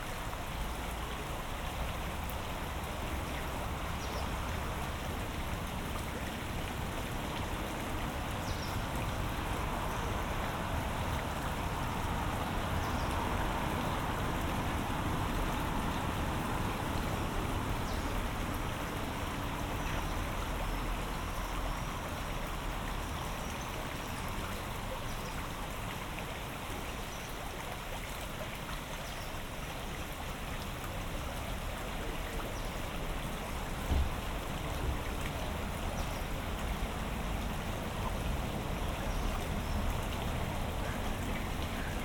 Kuldīga, evening ambience
Little water channel at the church.